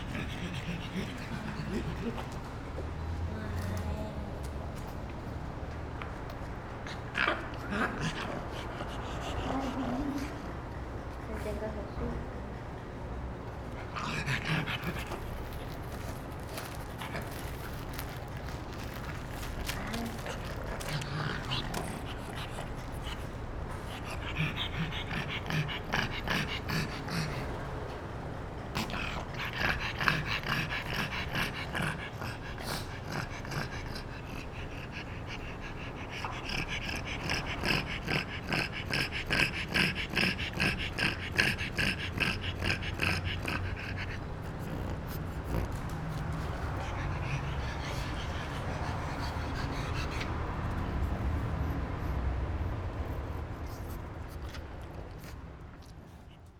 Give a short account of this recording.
dog in the gallery, Sony PCM D50